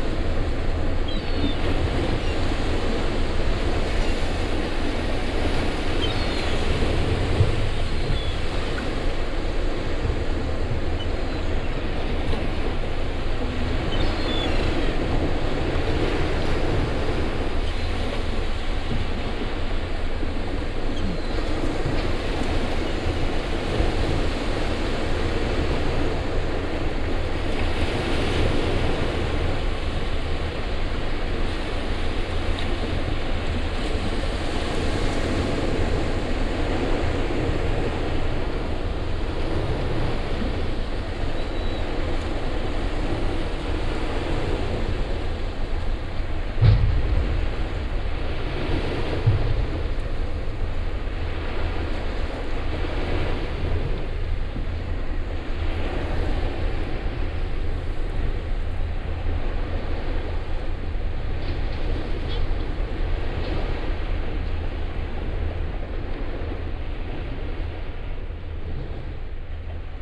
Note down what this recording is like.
At the beach in the early morning. The sound of the sea waves, seagulls and the sonorous drum of big garbage cans that are emptied in this early daytime. international ambiences and scapes and holiday sound postcards